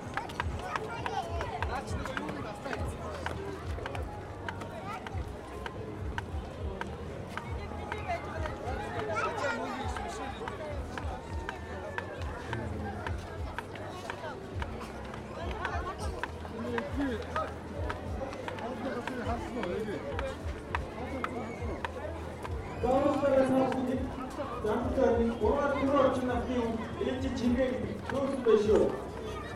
National amusement park, Ulaanbaatar, Mongolei - stairs in the amusementparc
children's day, opening of the amusement park, stairs from the cafe the entrance in the parc
Border Ulan Bator - Töv, Монгол улс, 1 June